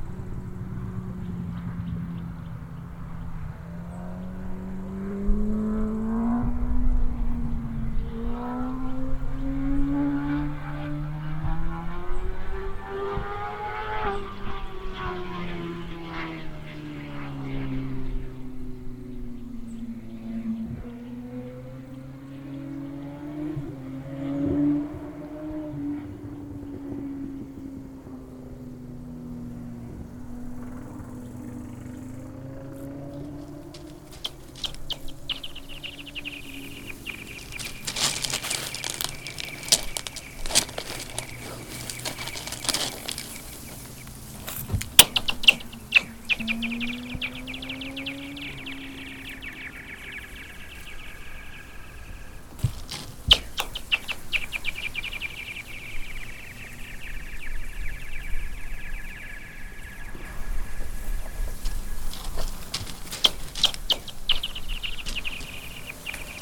Hrušovský rybník, Brandýs nad Labem-Stará Boleslav, Czechia - Stones on the ice and the motorcycle
Very thin ice on the lake and the motorcycle in distant landscape